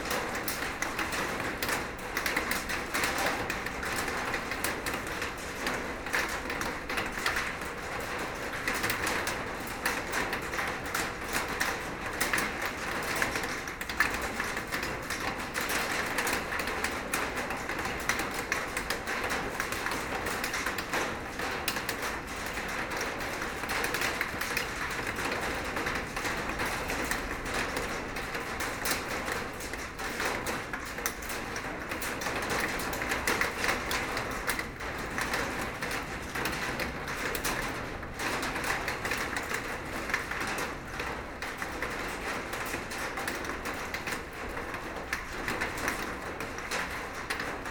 Seraing, Belgique - Rain
In the abandoned coke plant, rain is falling on a huge metal plate, it's windy and very bad weather. Drops falling from the top of the silo are large.
Seraing, Belgium